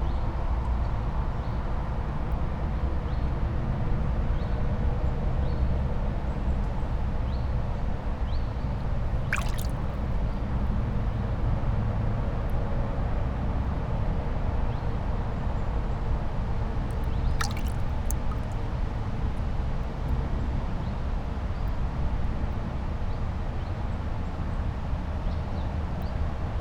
brittle pier, Melje, river Drava areas, Maribor - still water
feet, trying to stay in deeply cold water, strong traffic noise all around, birds, kingfisher among others, southwesterly winds through tree crowns